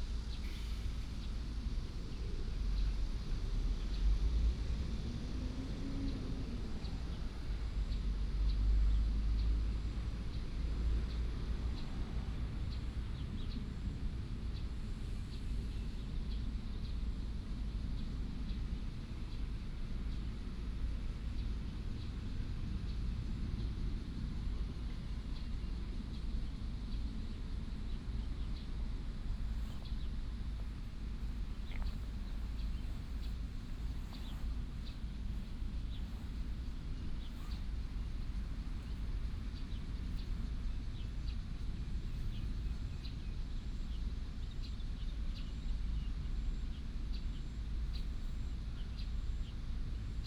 煉油廠南門綠地, Taoyuan Dist. - Next to the refinery
Next to the refinery, traffic sound, birds sound, dog
Taoyuan District, Taoyuan City, Taiwan, 27 July 2017